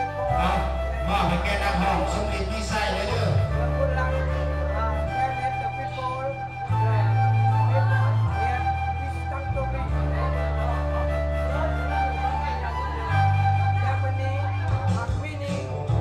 Road to Lipee Khon Tai, Laos - Kara-oke Lao style
Kara-oke Lao style
ຈຳປາສັກ, Laos, 18 December 2016, 20:28